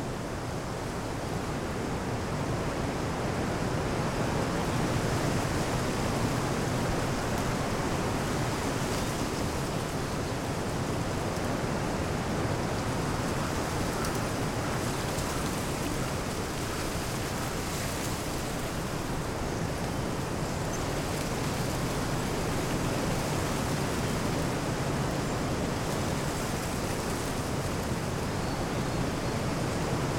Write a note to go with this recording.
Sur le chemin du phare de Ploumanac'h, début de la nuit, beaucoup de vent dans les arbres, toute les branchent bougent. On the lighthouse path, after sunset, a lot of wind on the trees makes branchs movent. /Oktava mk012 ORTF & SD mixpre & Zoom h4n